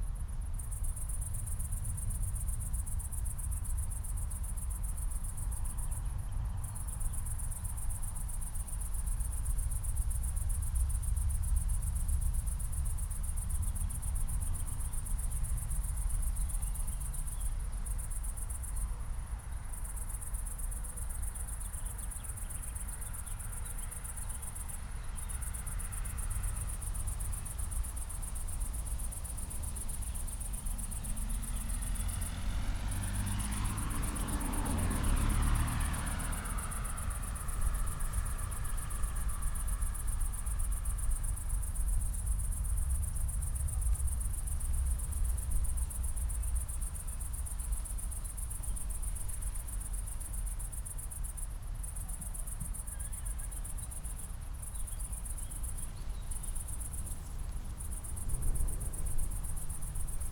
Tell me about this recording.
Hamburg Niendorf, surprisingly quiet summer evening ambience at the edge of the runway of Hamburg airport, probably because aircrafts depart and descend from an alternative runway because of wind conditions. Muscle car is passing by at the end of the recording. Short soundwalk with artist colleagues from the Kleine Gesellschaft für Kunst und Kultur, Hamburg, (Tascam DR100Mk3, DPA4060)